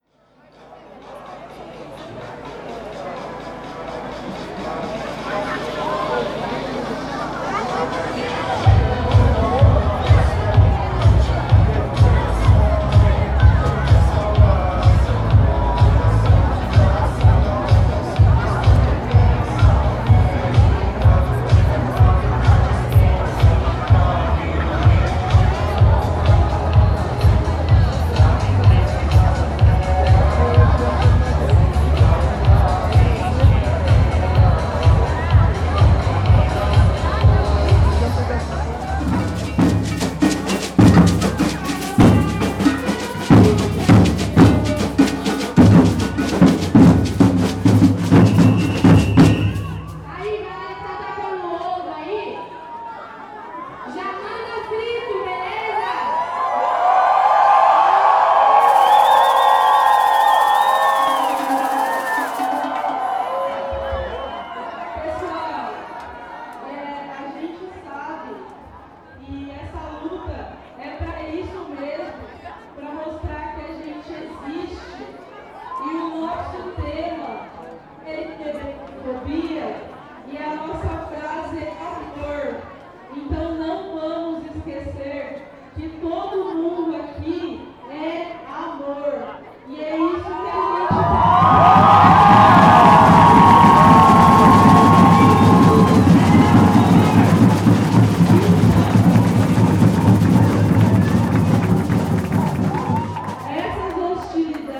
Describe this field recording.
Panorama sonoro: concentração da 1ª Parada LGBT de Londrina no Calçadão com música eletrônica, apresentação de maracatu e o impasse com alguns moradores de prédios ao entorno que tacavam ovos nos participantes, hino nacional e o momento em que a caminhada teve início em direção a Área de Lazer Luigi Borghesi (Zerão). Cerca de 5 mil pessoas participaram da parada. Sound panorama: concentration of the 1st LGBT Parade of Londrina on the Boardwalk with electronic music, presentation of maracatu and the impasse with some residents of surrounding buildings who tossed eggs at the participants, national anthem and the moment the walk started towards the Luigi Leisure Area Borghesi (Zerão). Around 5 thousand people participated in the parade.